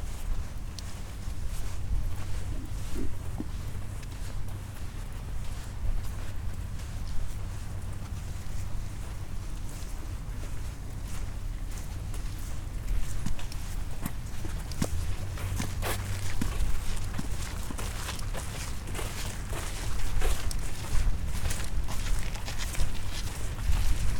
Montreal: Loyola Campus to Parc Loyola - Loyola Campus to Parc Loyola
equipment used: M-Audio Microtrack II
EAMT 399/E - class soundwalk